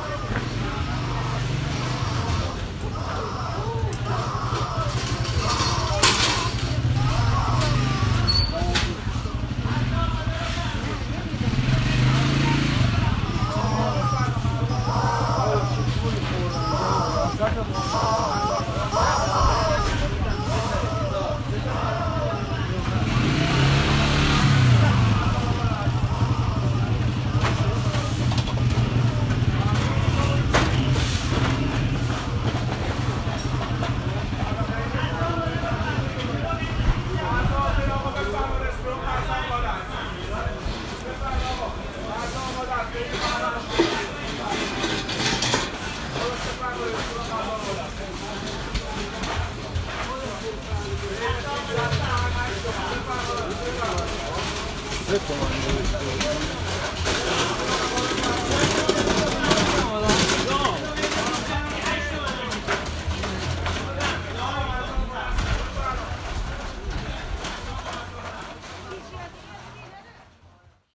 Tehran Province, Tehran, Sayyed Vali, Iran - Grand Bazaar Wagons
Bāzār e Bozorg - Grand Bazaar of Tehran is probably the biggest roofed bazaar in the world - a maze with about 10km of corridors.
Hand pulled carts are the most common method of transporting good within the bazaar.